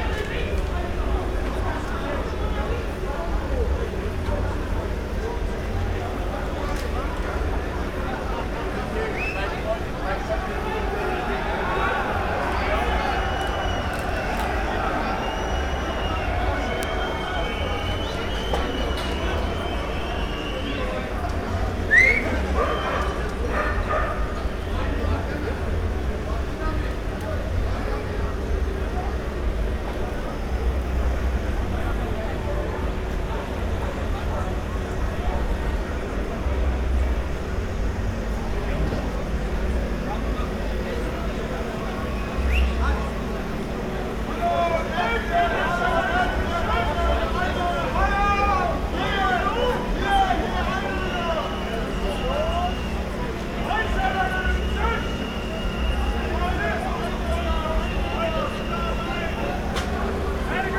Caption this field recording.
party people and police playing cat & mouse at Zentrum Kreuzberg, the usual small riots at this day. Nothing much happens. (Tascam IXJ2, Primo EM172)